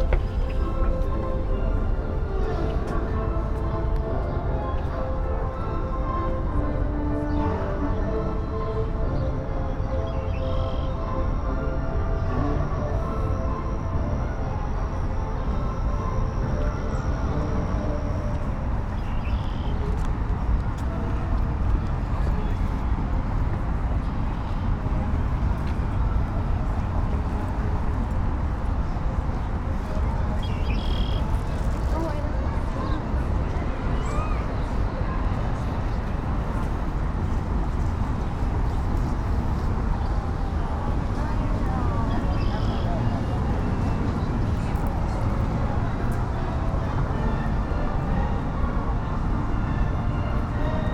Millennium Park, Chicago, IL, USA - Soundwalk from Lurie Garden to Randolph Street
Soundwalk from Lurie Garden to Randolph Street. Includes sounds of birds and pedestrians in the garden, street traffic, and Grant Park Orchestra concert at Pritzker Pavilion.
18 July 2014